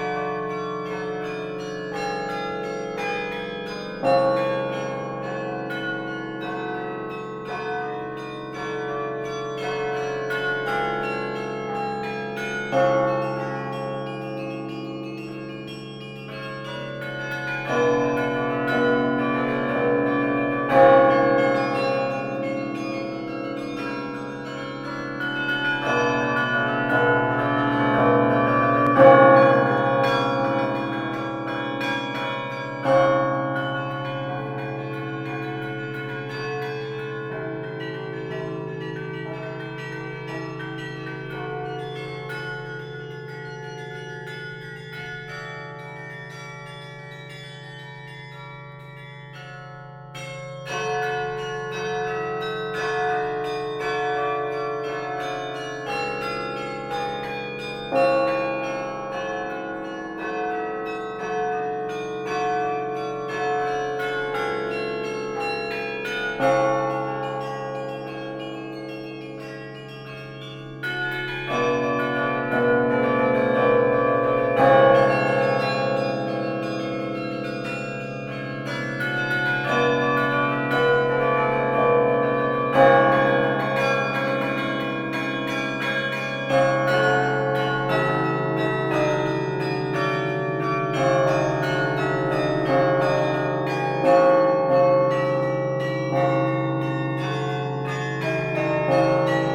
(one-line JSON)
{"title": "Nivelles, Belgique - Nivelles carillon", "date": "2010-10-08 15:05:00", "description": "Recording of a carillon concert in the Nivelles collegiale church. Performer is Toru Takao, a japanese master of carillon living in Germany. He's playing Danse Macabre from Saint-Saëns.", "latitude": "50.60", "longitude": "4.32", "altitude": "101", "timezone": "Europe/Brussels"}